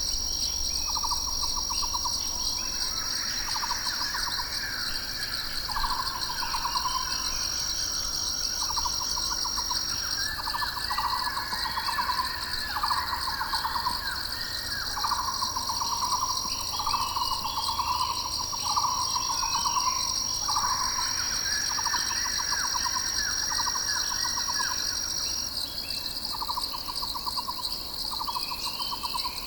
Morning at the roadside in the Bawangling Forest Reserve.
Recorded on Sony PCM-M10 with built-in microphones.
Changjiang Lizuzizhixian, Hainan Sheng, China, 4 April 2017, ~09:00